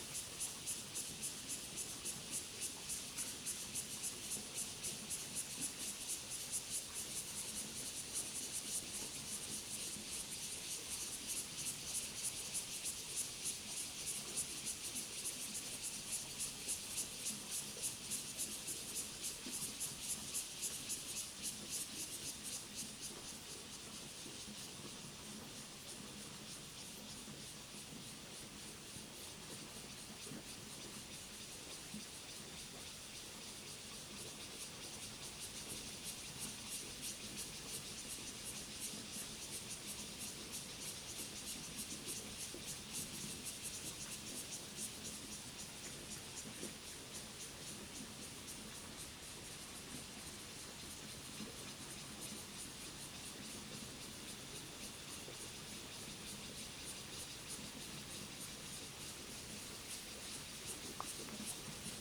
港口村, Fengbin Township - Cicadas and Stream
Cicadas sound, Stream, Traffic Sound
Zoom H2n MS +XY